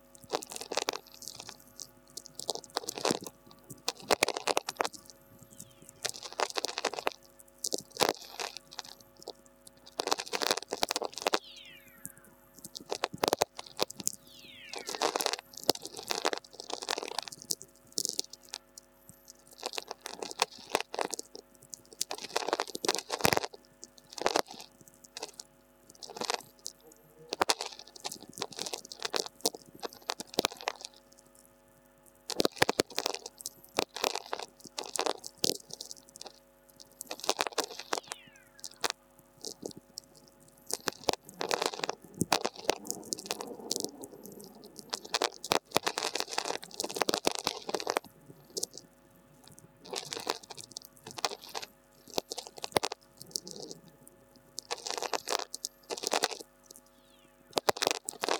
Nemeiksciai, Lithuania, VLF emission with whistlers
listening to atmospheric radio with VLF receiver. distant lightnings - tweakers with occasional whistlers
Utenos apskritis, Lietuva, 6 June 2020